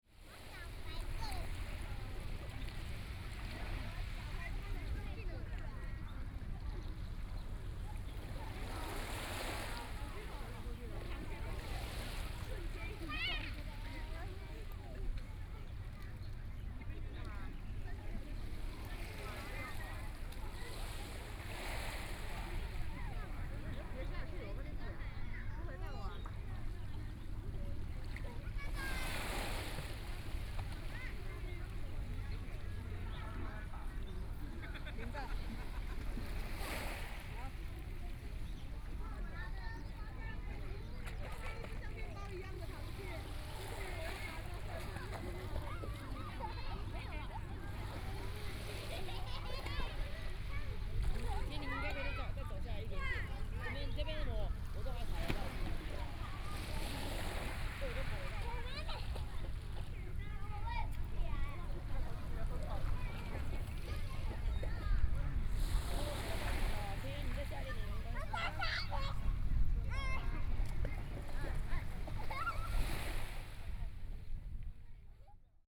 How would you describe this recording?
Tourist, Sitting on the beach, Sound of the waves, Hot weather